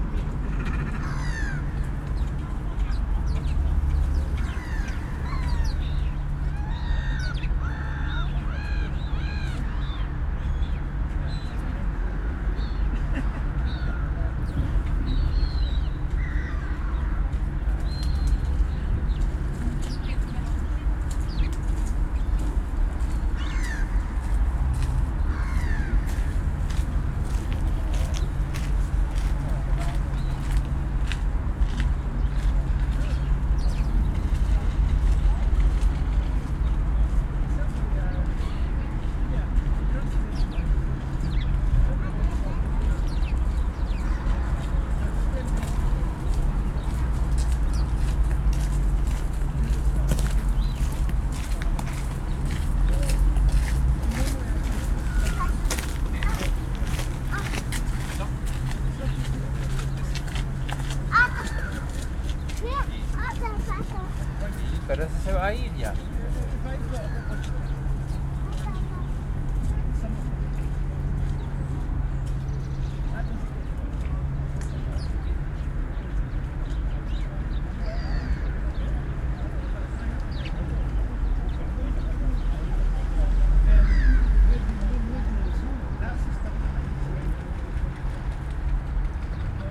Michaelkirchpl., Berlin, Deutschland - Engeldamm Legiendamm
Engeldamm_Legiendamm
Recording position is the first park bench if you take the entrance Engeldamm and Legiendamm.
28 November